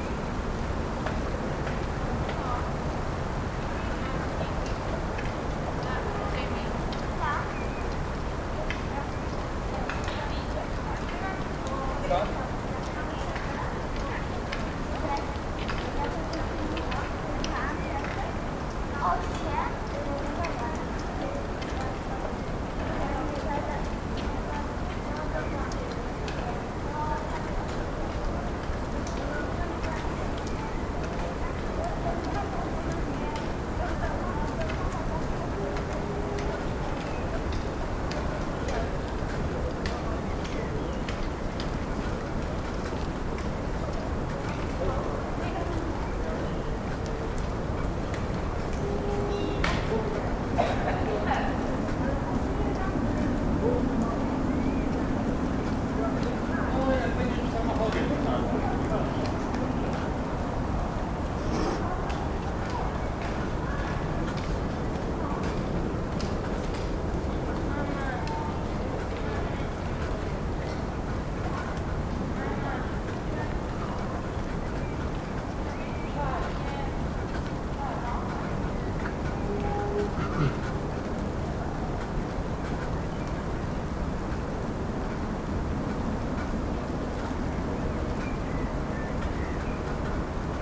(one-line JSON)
{"title": "Rożana 2 - Park Grabiszyński słyszany z werandy", "date": "2021-04-22 18:51:00", "description": "Słońce uformowało się około 4,567 mld lat temu na skutek kolapsu grawitacyjnego obszaru w dużym obłoku molekularnym. Większość materii zgromadziła się w centrum, a reszta utworzyła orbitujący wokół niego, spłaszczony dysk, z którego ukształtowała się pozostała część Układu Słonecznego. Centralna część stawała się coraz gęstsza i gorętsza, aż w jej wnętrzu zainicjowana została synteza termojądrowa. Naukowcy sądzą, że niemal wszystkie gwiazdy powstają na skutek tego procesu. Słońce jest typu widmowego G2 V, czyli należy do tzw. żółtych karłów ciągu głównego; widziane z Ziemi ma barwę białą. Oznaczenie typu widmowego „G2” wiąże się z jego temperaturą efektywną równą 5778 K (5505 °C), a oznaczenie klasy widmowej „V” wskazuje, że Słońce, należy do ciągu głównego gwiazd i generuje energię w wyniku fuzji jądrowej, łącząc jądra wodoru w hel. Słońce przetwarza w jądrze w ciągu sekundy około 620 mln ton wodoru.", "latitude": "51.09", "longitude": "16.99", "altitude": "123", "timezone": "Europe/Warsaw"}